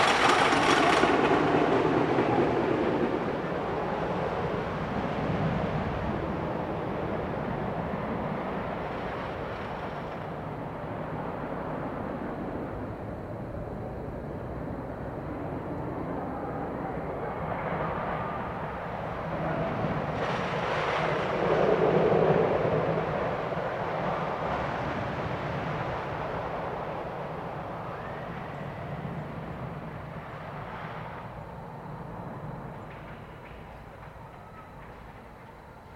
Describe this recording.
In honor of the 50th anniversary of Six Flags St. Louis, I made multiple recordings in the woods of sounds from the amusement park as I descended the hill to the park from the Rockwoods Towersite off Allenton Road. This was the closest recording of the Screamin' Eagle wooden roller coaster.